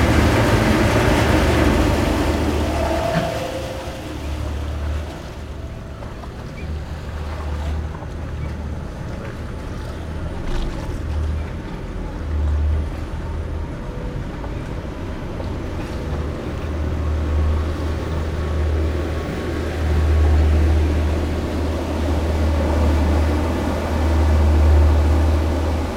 Hamburg, Deutschland - Pontoon and tourist boat
On the pontoon. Landungsbrücken near the Elbphilharmonie. Some tourist boats berthing. At the backyard, sounds of the Hamburg harbor.
Platz der Deutschen Einheit, Hamburg, Germany, 19 April 2019